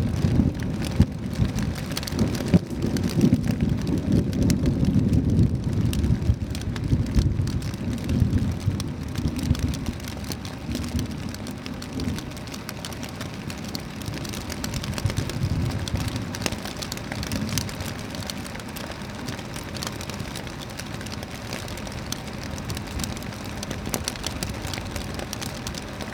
Yonghe, New Taipei City - Thunderstorm
Thunderstorm, Sony ECM-MS907, Sony Hi-MD MZ-RH1